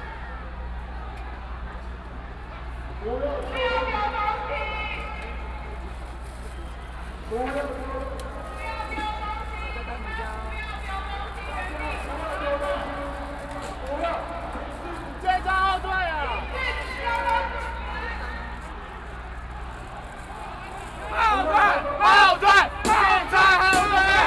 government dispatched police to deal with students, Protest, University students gathered to protest the government, Occupied Executive Yuan
Riot police in violent protests expelled students, All people with a strong jet of water rushed, Riot police used tear gas to attack people and students
Binaural recordings
Taipei City, Taiwan, 24 March 2014